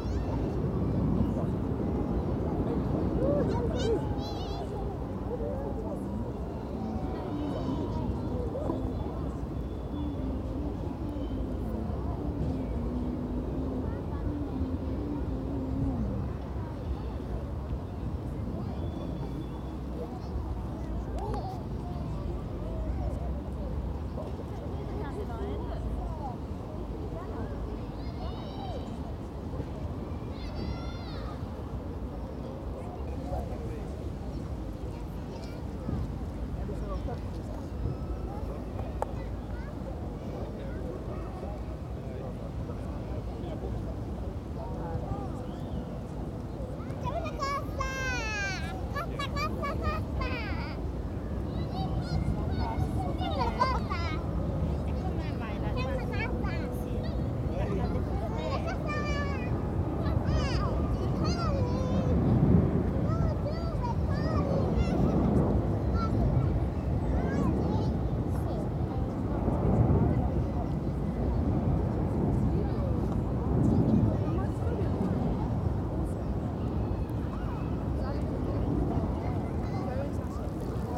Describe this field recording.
Pretty noisy environment. Sunday in Richmond on Thames, lots of kids, planes, someone playing an electric guitar not far from me... Although I have a decent, long hair DeadCat, the wind still can be heard. Sony PCM D100 and a little EQ